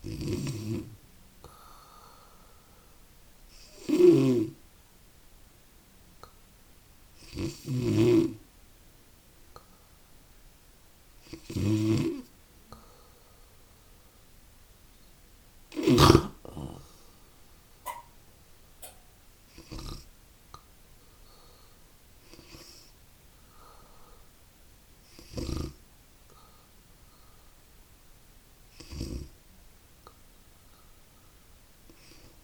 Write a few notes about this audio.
inside my grand mas sleeping room at her death bed, listening to the breathing in the night of her final farewell. soundmap nrw - social ambiences and topographic field recordings